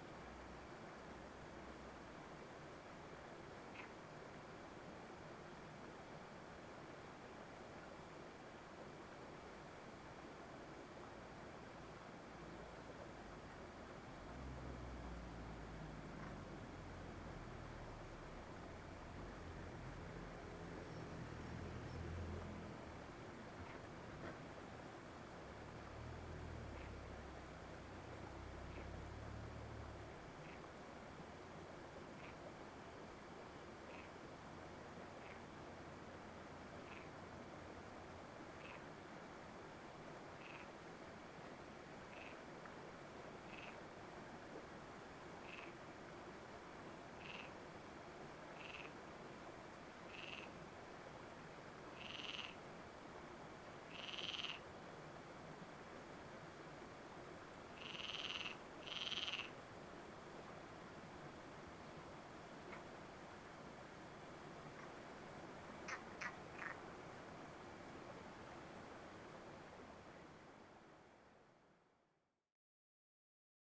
Bolulla - Province d'Alicante - Espagne
Ambiance du soir sur le pont - quelques grenouilles....
ZOOM F3 + AKG 451B